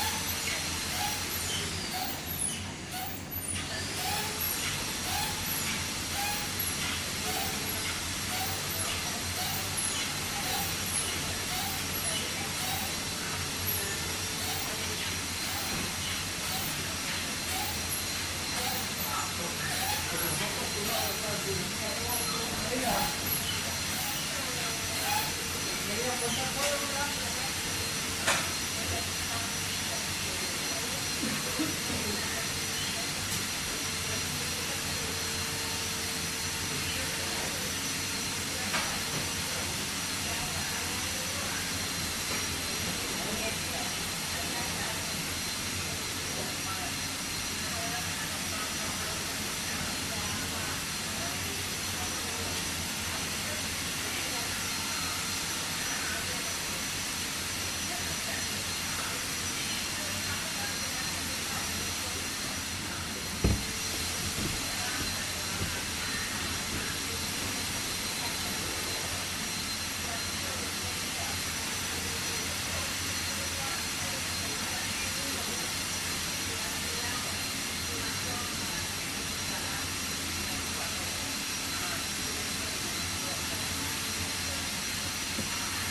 Niaqornat, Grønland - Fish Factory
The sounds of the small fish factory in Niaqornat. Recorded with a Zoom Q3HD with Dead Kitten wind shield.